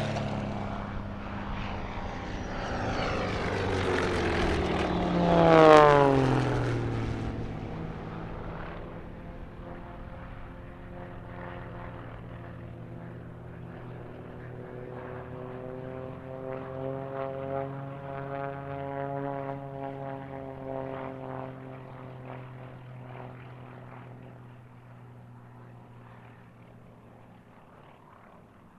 Grobnik, aerodrom, aero show
Aero-show @ Grobnik field.
Pilatus plane in air.